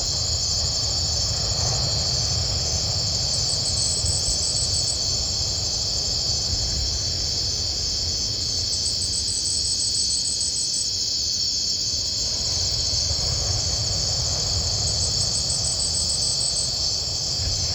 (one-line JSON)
{"title": "Laguna Chacahua - Night in Chacahua, crickets and seawaves", "date": "2013-01-10 23:00:00", "description": "During the night in the Laguna of Chacahua. Some crickets are singing, sound of the pacific ocean in background.\nRecorded by a binaural of 2 Sanken Cos11D on an Olympus LS5", "latitude": "15.98", "longitude": "-97.64", "timezone": "GMT+1"}